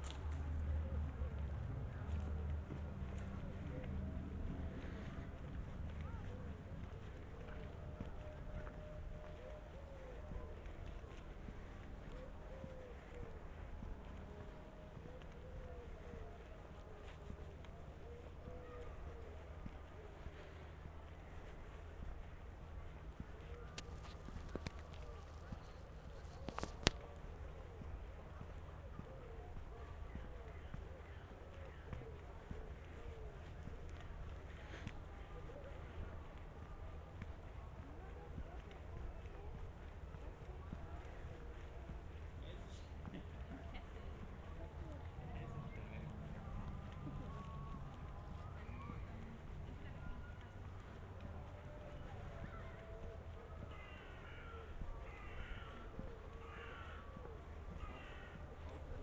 Halle_World_Listening_Day_200718
WLD2020, World Listening Day 2020, in Halle, double path synchronized recording
In Halle Ziegelwiese Park, Saturday, July 18, 2020, starting at 7:48 p.m., ending at 8:27 p.m., recording duration 39’18”
Halle two synchronized recordings, starting and arriving same places with two different paths.
This is file and path B:
A- Giuseppe, Tascam DR100-MKIII, Soundman OKMII Binaural mics, Geotrack file:
B – Ermanno, Zoom H2N, Roland CS-10M binaural mics, Geotrack file:
Sachsen-Anhalt, Deutschland, 18 July, 19:48